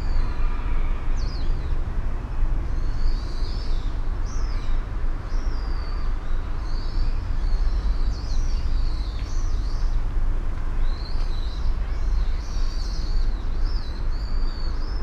tea house, Shoseien, Kyoto - still
gardens sonority
tea house above still water
red pail, full of rain
it will start again soon enough
Kyōto-fu, Japan, 31 October 2014